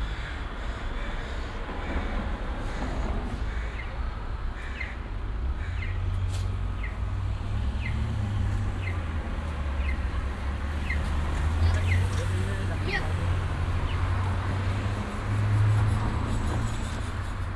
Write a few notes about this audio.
sounding traffic lights at busy street downtown in the early afternoon, soundmap international, social ambiences/ listen to the people - in & outdoor nearfield recordings